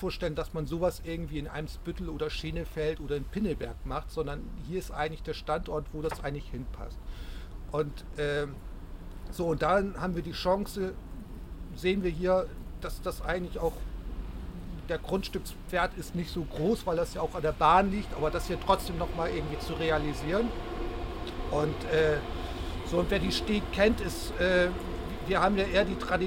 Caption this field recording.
Das Grundstück in der Eifflerstraße ist von der Finanzbehörde Hamburg als Kreativimmobilie ausgeschrieben worden. Kurt Reinke (STEG) erläutert dem Gartenkunstnetz das Kaufangebot und den Bebauungsplan der STEG.